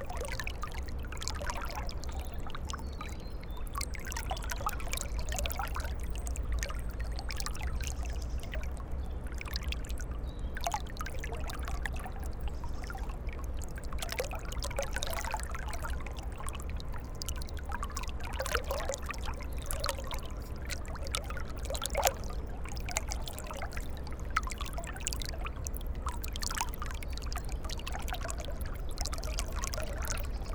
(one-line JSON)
{"title": "Saint-Pierre-lès-Elbeuf, France - Eure confluence", "date": "2016-09-19 15:00:00", "description": "The Eure river confluence, going into the Seine river. It's a quiet place, contrary to Elbeuf city.", "latitude": "49.29", "longitude": "1.04", "altitude": "4", "timezone": "Europe/Paris"}